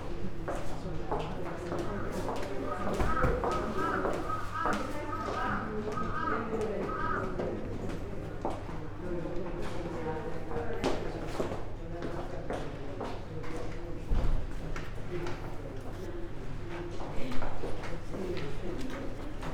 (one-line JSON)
{"title": "hase-dera, kamakura, japan - hase-kannon", "date": "2013-11-17 16:42:00", "description": "inside of the temple, whisperings, steps, quietness", "latitude": "35.31", "longitude": "139.53", "altitude": "22", "timezone": "Asia/Tokyo"}